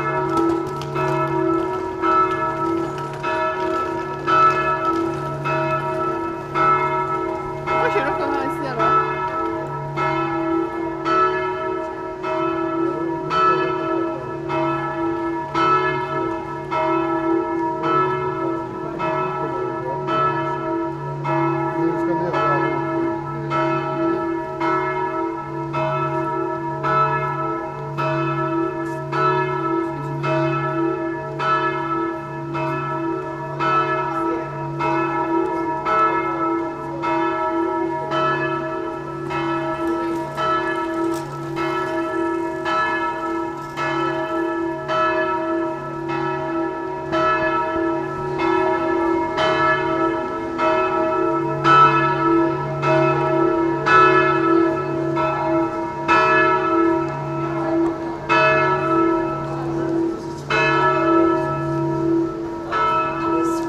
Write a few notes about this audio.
Bells ringing on a Sunday at 6pm